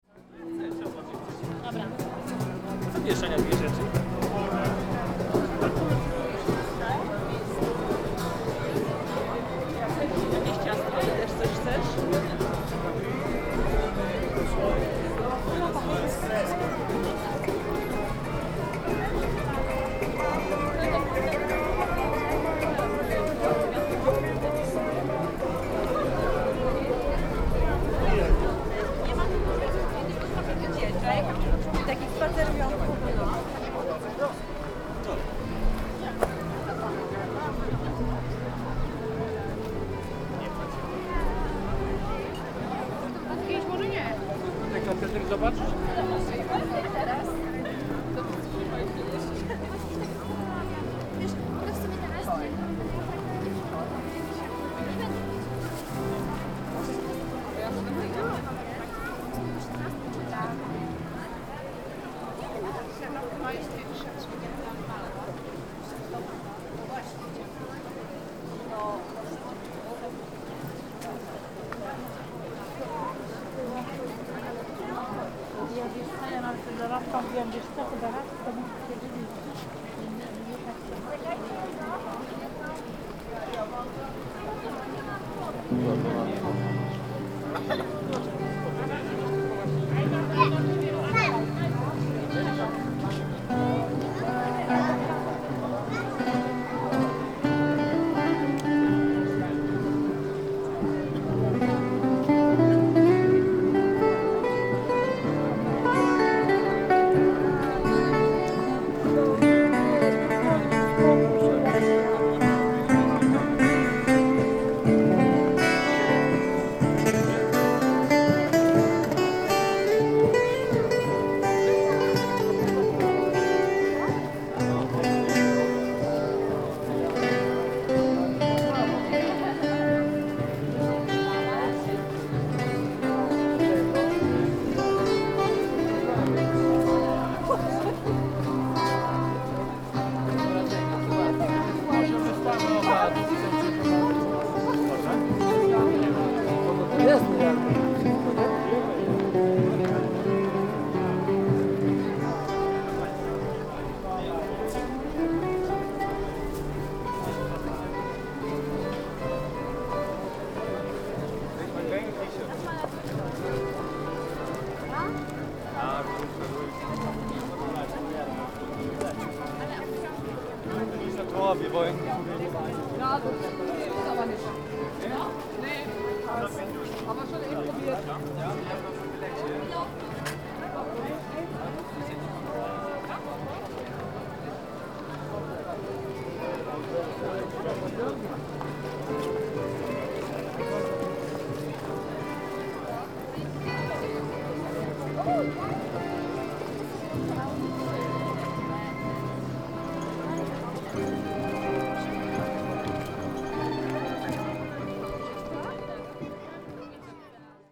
Śródmieście, Gdańsk, Poland, street walk
walk through Dluga street. some fair with street musicians and tradespeople
13 August 2014